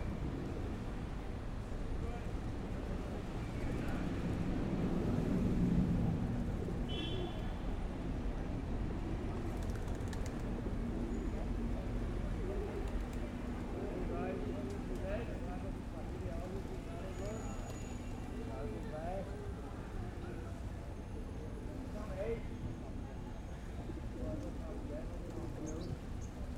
Bishop Lucey Park, Grand Parade, Cork City - Bishop Lucey Park
Peace Park on a wet and humid August afternoon. Pigeons and people drinking cans abound.
Recorded onto a Zoom H5 with an Audio Technica AT2022 on a park bench.
13 August, ~13:00